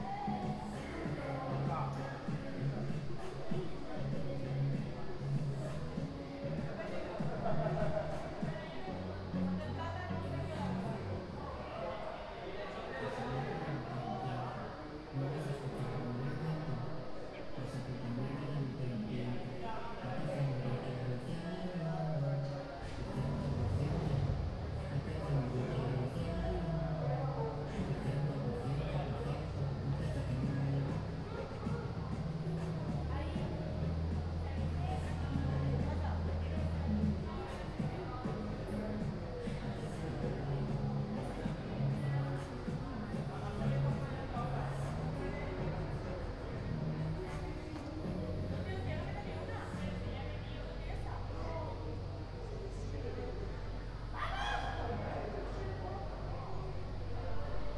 Ascolto il tuo cuore, città, I listen to your heart, city. Several chapters **SCROLL DOWN FOR ALL RECORDINGS** - Round midnight students college party again in the time of COVID19 Soundscape
"Round midnight students college party again in the time of COVID19" Soundscape
Chapter CXXXIV of Ascolto il tuo cuore, città. I listen to your heart, city
Saturday, October 3nd 2020, five months and twenty-two days after the first soundwalk (March 10th) during the night of closure by the law of all the public places due to the epidemic of COVID19.
Start at 11:49 p.m. end at 01:26 a.m. duration of recording 35’29”
Piemonte, Italia, 3 October 2020